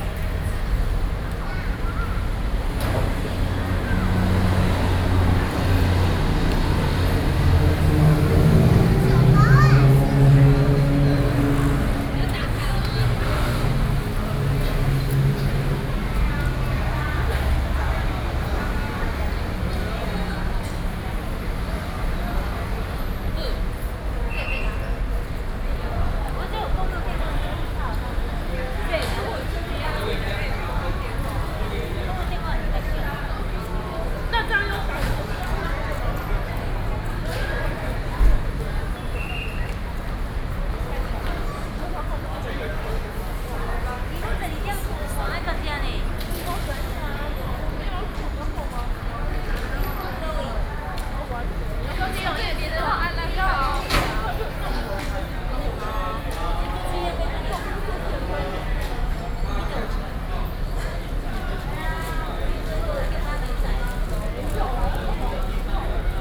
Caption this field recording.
The tanker being dropped garbage, Train message broadcasting, Binaural recordings